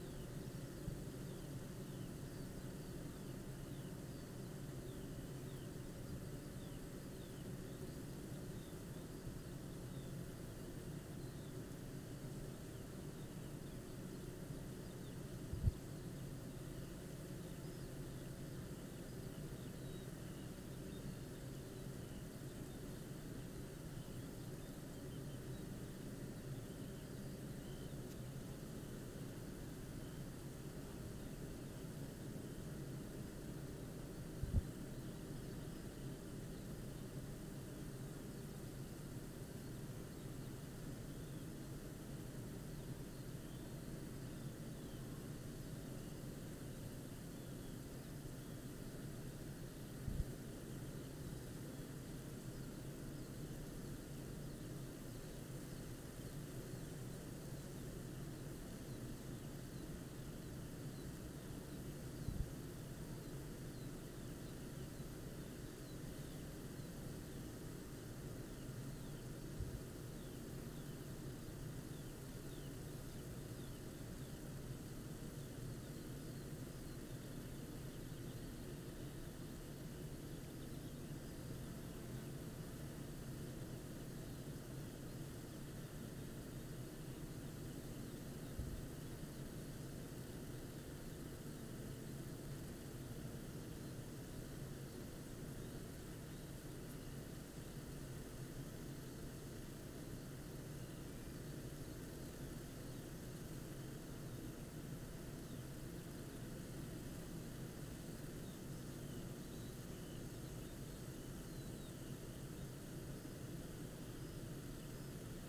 Green Ln, Malton, UK - bee hives ...
bee hives ... dpa 4060s clipped to bag to Zoom H5 ... all details above ...